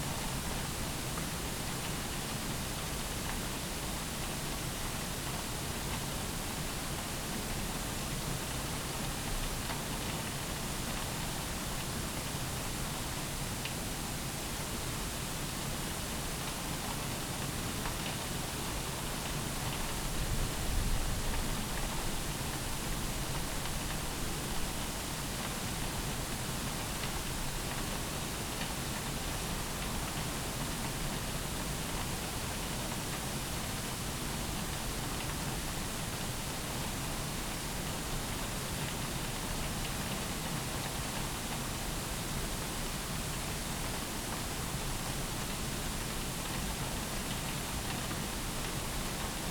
Königsheide forest, sound of a ground water treatment plant at work
(Sony PCM D50, DPA4060)